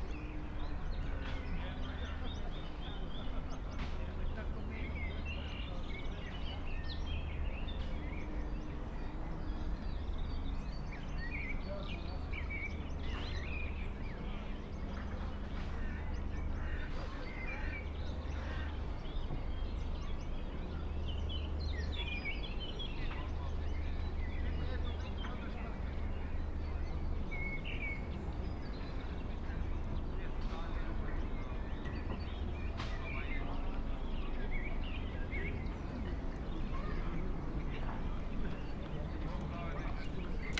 river Drava, Na Otok, Maribor - riverside ambience
late afternoon spring ambience at river Drava, Maribor, rumble of distant thunder.
(Sony PCM D50, Primo EM172)